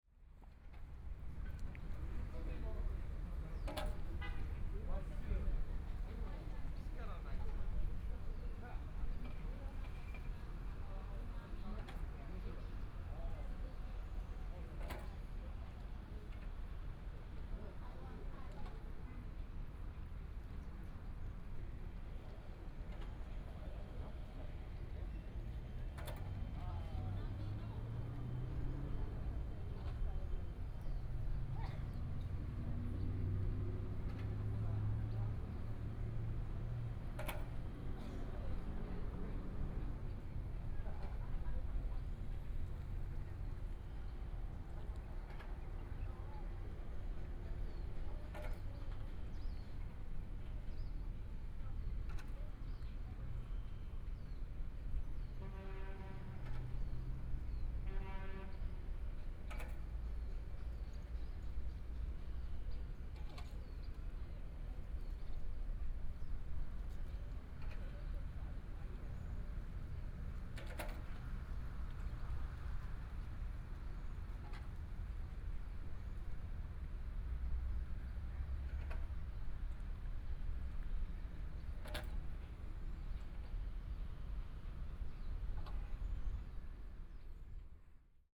Lotus Pond, Zuoying District - Sound from water-skiing facilities
Sound from water-skiing facilities, Traffic Sound, The weather is very hot